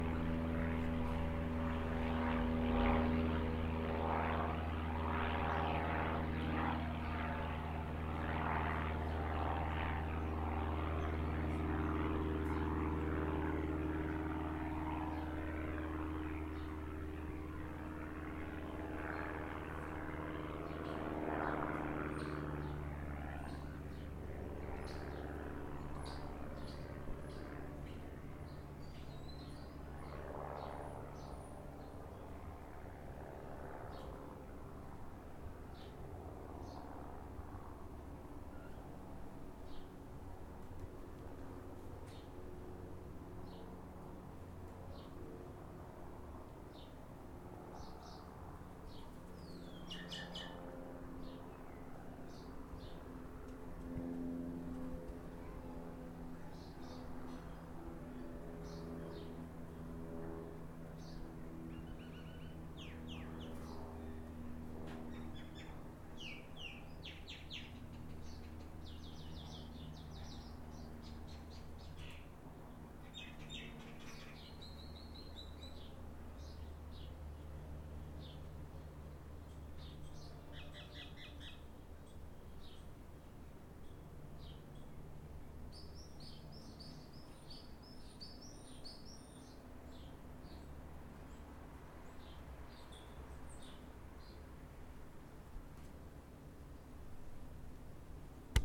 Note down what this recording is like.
Planes, flies and birds all buzzing around. Zoom H4n